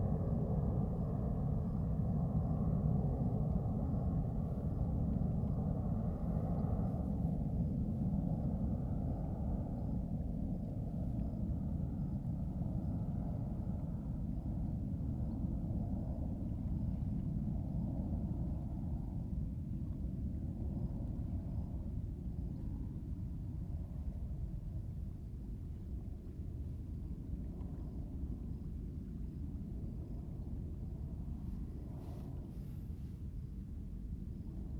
虎頭山, Huxi Township - Aircraft flying through
Aircraft flying through, On the coast
Zoom H2n MS +XY
Huxi Township, Penghu County, Taiwan, 21 October 2014, 16:36